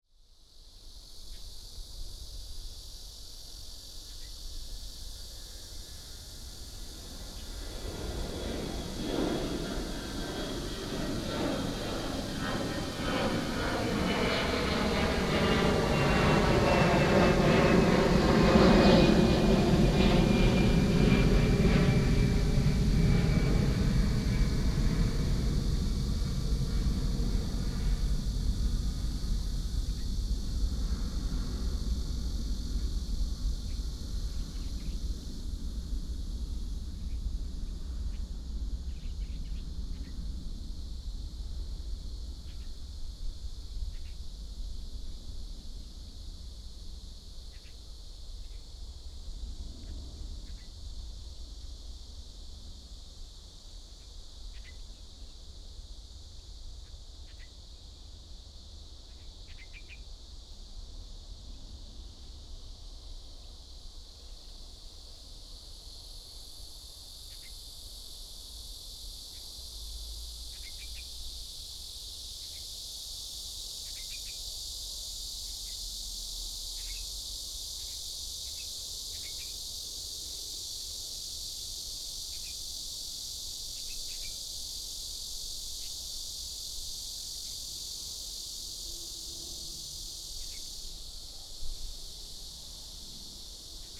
大牛稠, 桃園市大園區 - Near the airport runway
Near the airport runway, Cicada and bird sound, The plane flew through